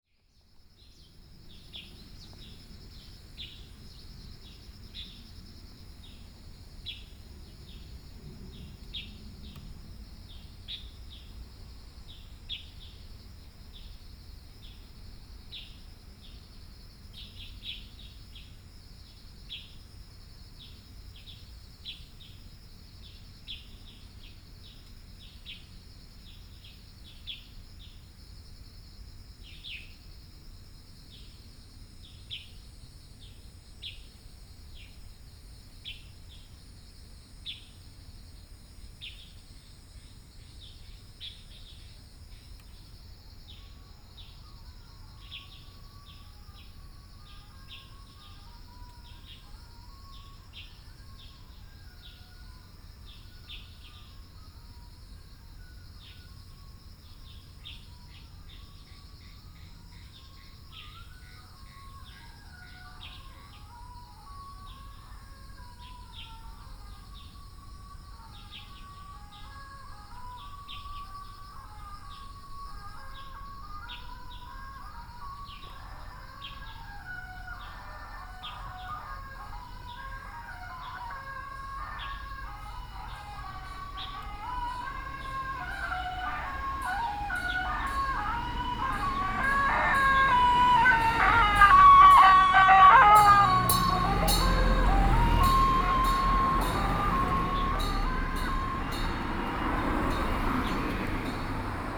員山鄉永和村, Yilan County - At the roadside
Birds singing, Traffic Sound, Funeral, At the roadside
Sony PCM D50+ Soundman OKM II